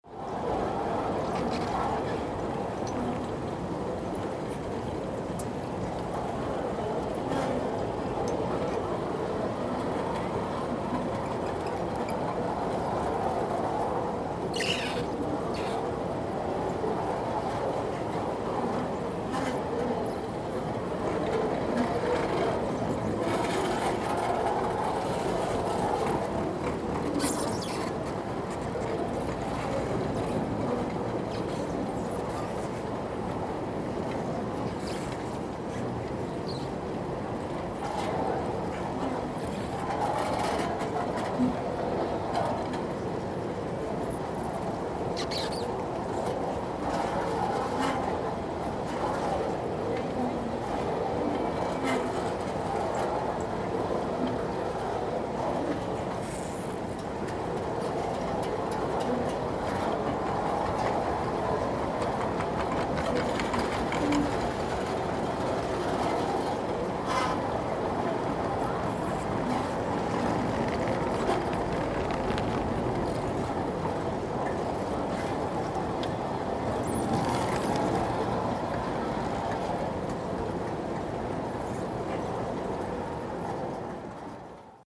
stansted airport, baggage claim
baggage belt noise.
recorded july 18, 2008.